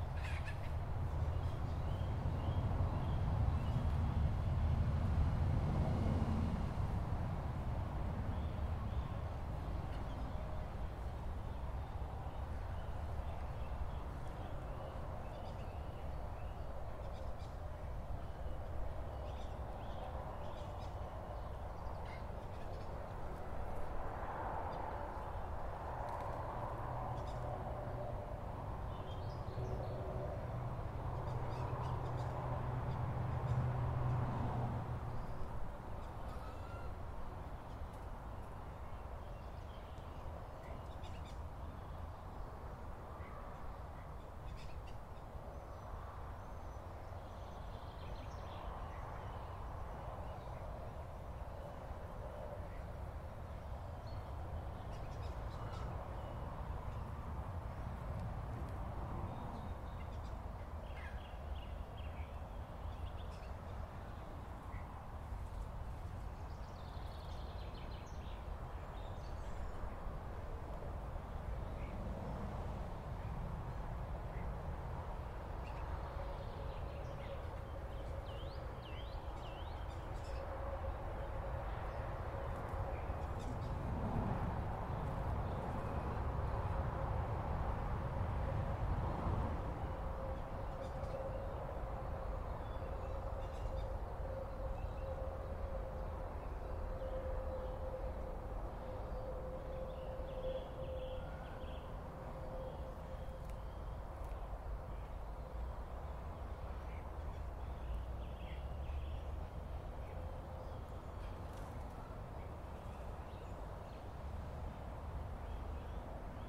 State Highway 17, Silverdale, Auckland, New Zealand
A short recording of the ambient sounds by the road.